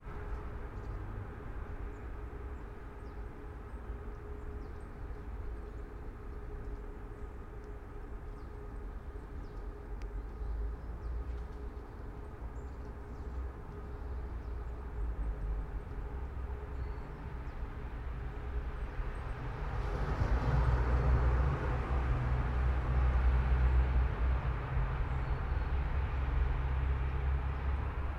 all the mornings of the ... - jan 13 2013 sun